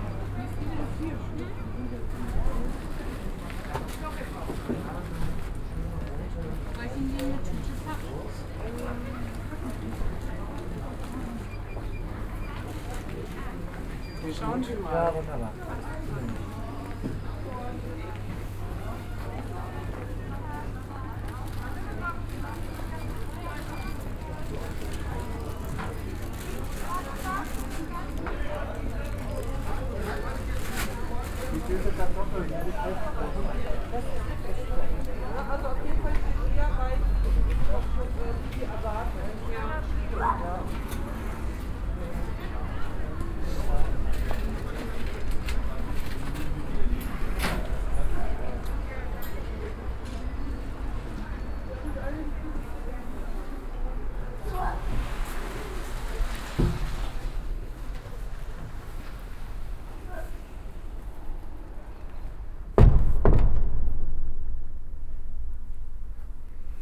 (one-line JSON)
{"title": "Paulus Kirche, Hamm, Germany - Green market, church quiet and noon bells", "date": "2020-04-02 11:50:00", "description": "walking towards the main church doors, pushing them open to enter, lingering a little inside listening and returning in to the buzz outside; people have gathered around the fountain, in required safety distance, noon bells...", "latitude": "51.68", "longitude": "7.82", "altitude": "66", "timezone": "Europe/Berlin"}